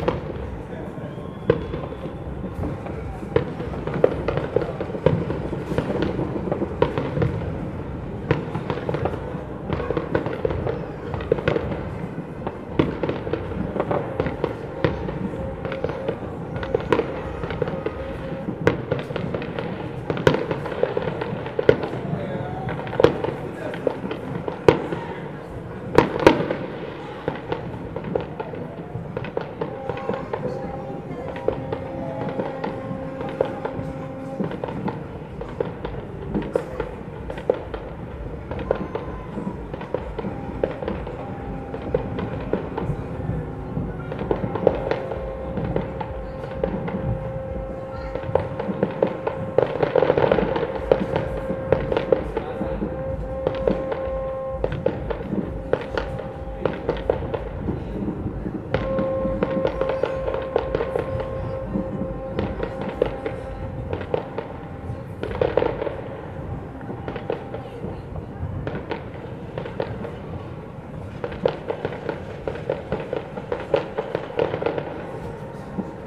Lisbon, Portugal - Countdown to new year 2016, Lisbon
Sounds of the neighbourhood, countdown to new year 2016 and fireworks.